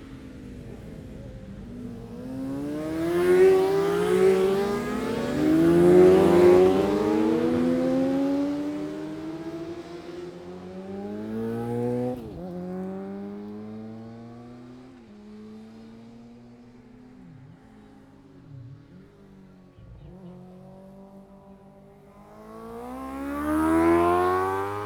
Scarborough District, UK - Motorcycle Road Racing 2016 ... Gold Cup ...
Sidecar practice ... Mere Hairpin ... Oliver's Mount ... Scarborough ... open lavalier mics clipped to baseball cap ...
24 September, ~11:00